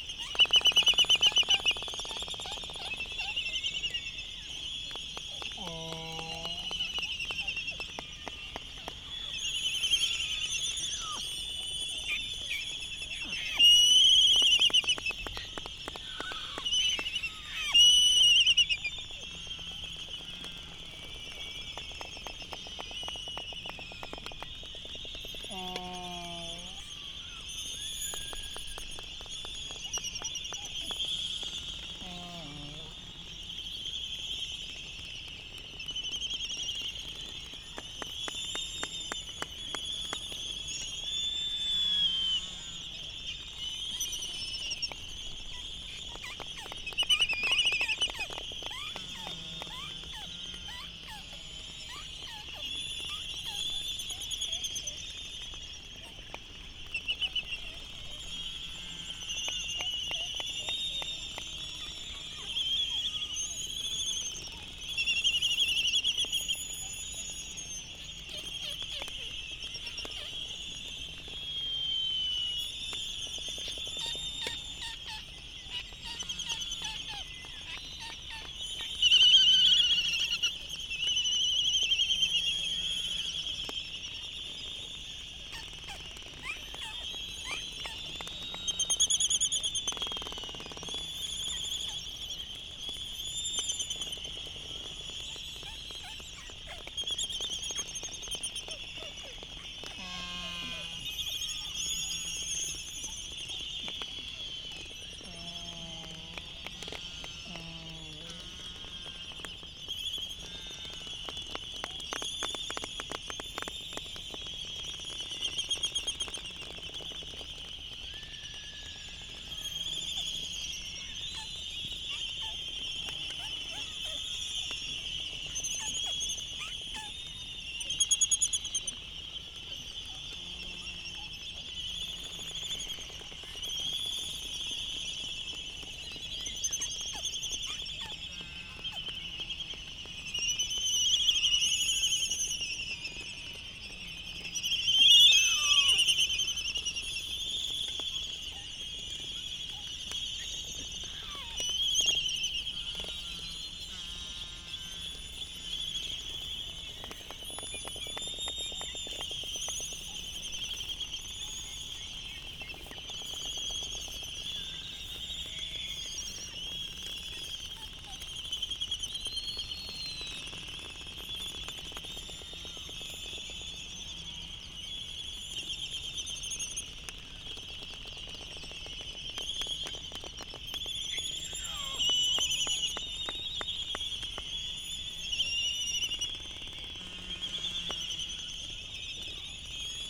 United States Minor Outlying Islands - Laysan albatross dancing ...
Laysans dancing ... Sand Island ... Midway Atoll ... lots of whinnying ... sky moos and bill clapperings ... bird calls ... canaries ... open lavalier mics on a mini tripod ... background noise ...
13 March, 18:45